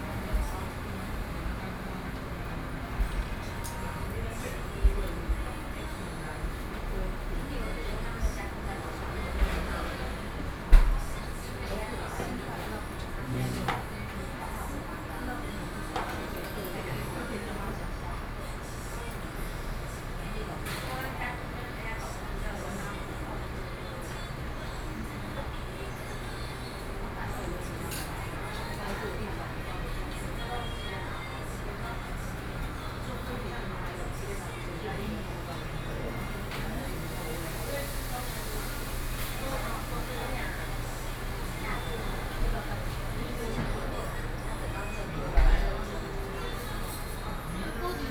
Beitou, Taipei - In the restaurant
In the restaurant, Sony PCM D50 + Soundman OKM II